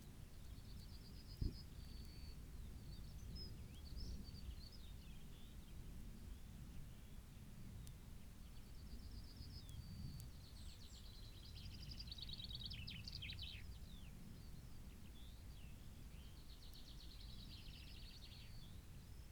Green Ln, Malton, UK - grubbed out bees nest ...
grubbed out bees nest ... buff tipped bees nest ..? dug up by a badger ..? dpa 4060s in parabolic to MixPre3 ... parabolic resting on lip of nest ... bird song ... calls ... yellowhammer ... blackbird ... whitethroat ...
England, United Kingdom